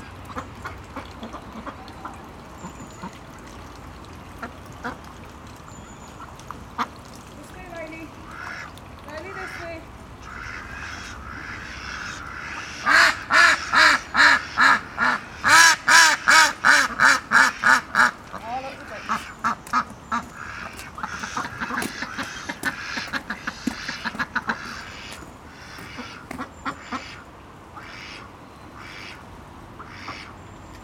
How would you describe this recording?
Walking Festival of Sound, 13 October 2019, Ducks at Ouseburn Farm, mono recording (saved as Stereo file), DPA4060, Sound Devices MixPre6, Location: Ouseburn Farm, Ouseburn, Newcastle upon Tyne, 54.975419, -1.590951